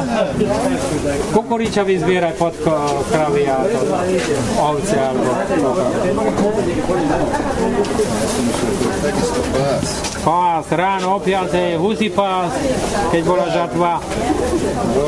bratislava, market at zilinska street
vendor explaining how times and people are changing around the marketplace
3 September, 10:36